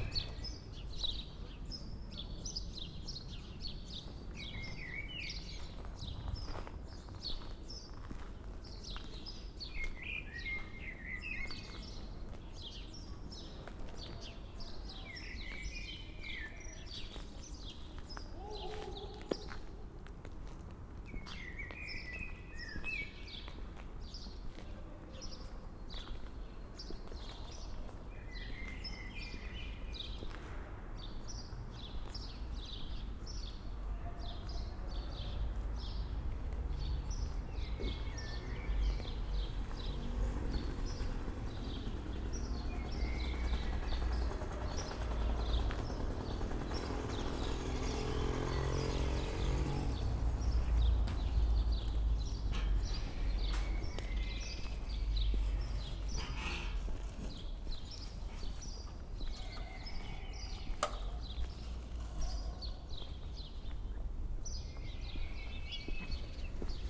playground, Maybachufer, Berlin, Deutschland - playground ambience
cold SUnday early evening, playground Schinkestr./Maybachufer, normally you'd expect a few parents with kids here, but it's cold and there's corona virus spreading. A few youngsters playing soccer, very rough and obviously contrary to the rules of conduct demanded by the government.
(Sony PCM D50, DPA4060)